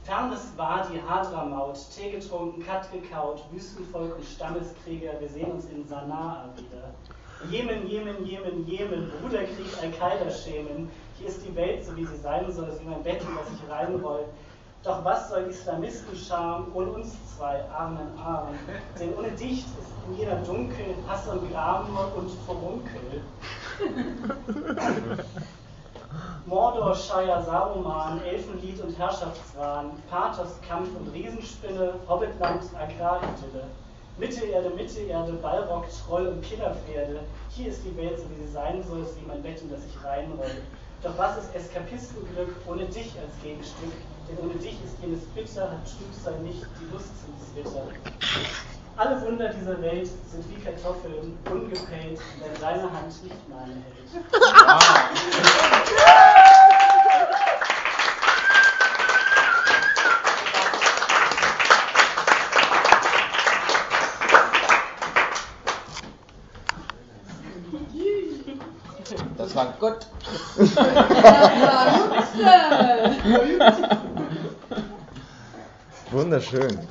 The fourth synergeitic symposium this year held at DER KANAL. Here we can present, alas, but one of the plentiful literary fruit: Niklas is reading out a letter from Rudyard Kipling to Bud Spencer, taken from the abundant correspondence of these two modern heros.
Berlin, Germany, 22 August 2010